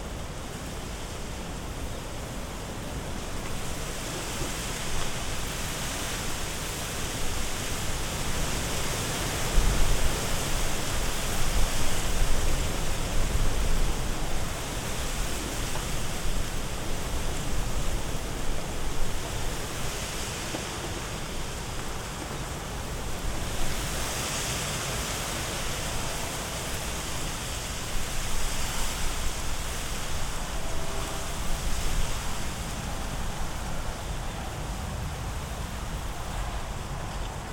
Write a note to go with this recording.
Strong wind blowing into young bamboo trees, a few birds, and some human sounds in rural Japan on New Year's Day, 2015.